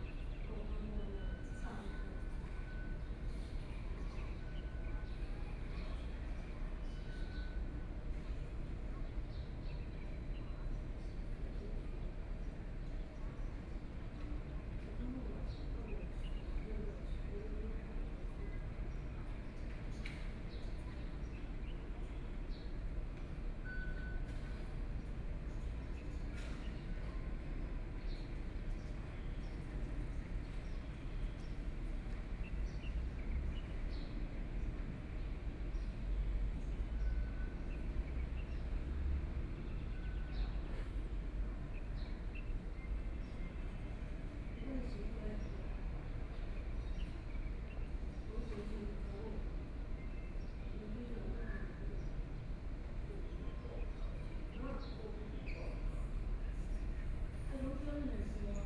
Fuxinggang Station, Beitou District - station platform
In the MRT station platform, Waiting for the train
Binaural recordings, ( Proposal to turn up the volume )
Sony PCM D50+ Soundman OKM II